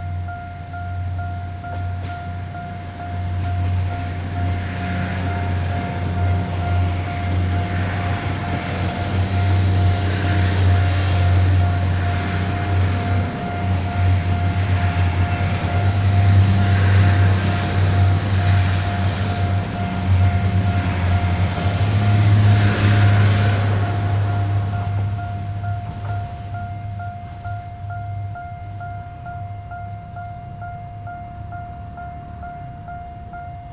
Deyang Rd., Jiaoxi Township - in the Railway level crossing
Near the railroad tracks, Trains traveling through, Traffic Sound, Railway level crossing
Zoom H6 MS+ Rode NT4